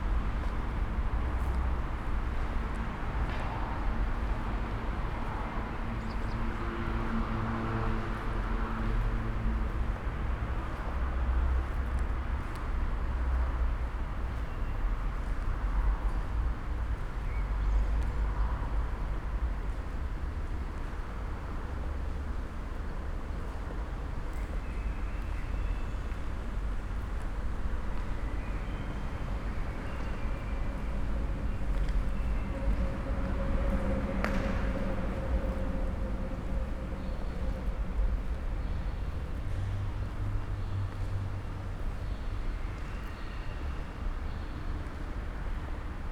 Sonnenallee, Neukölln, Berlin - abandoned factory
walk and ambience in an abandoned factory. this building is relatively new, according to a worker i've spoken too, but is in the process of beeing dismantled for the A100 motorway.
(Sony PCM D50, DPA4060)